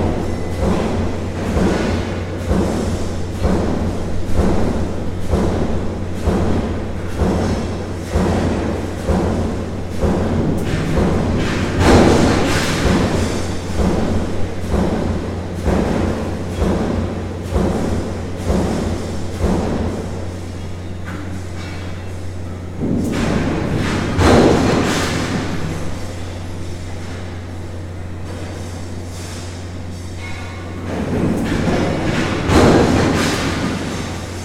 Steel press in action - recorded from outside the factory through an open window.
福岡県, 日本